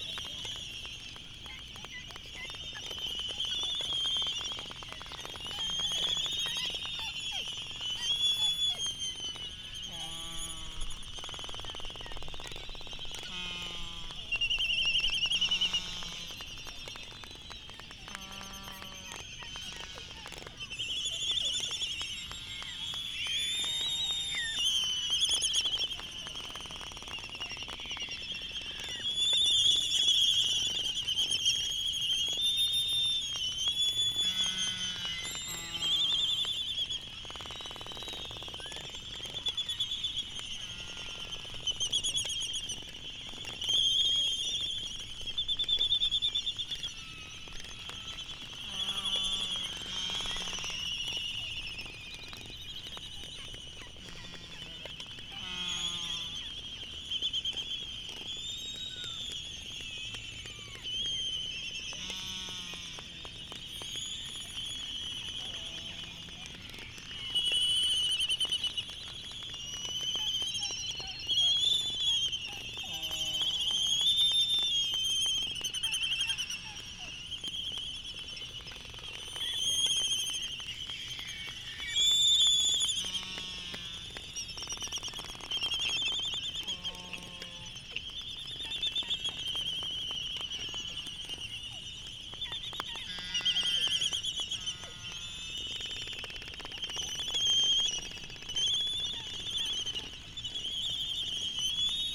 United States Minor Outlying Islands - Laysan albatross dancing ...
Laysan albatross dancing ... Sand Island ... Midway Atoll ... fur cover tennis table bat with lavalier mics ... mini jecklin disc ... sort of ..? just rocking ... background noise ... Midway traffic ...
14 March 2012